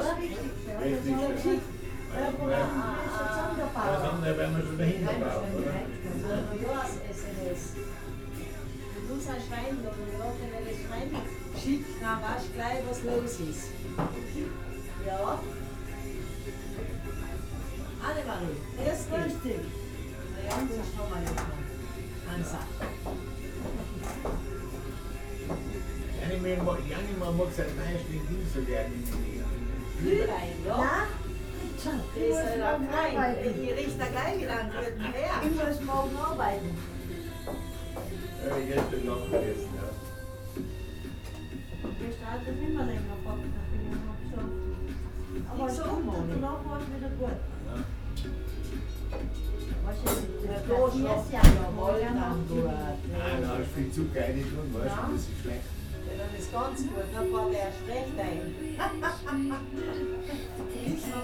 Innsbruck, Österreich - annemaries café
annemaries café, amraser str. 1, innsbruck
Innsbruck, Austria, 1 January 2015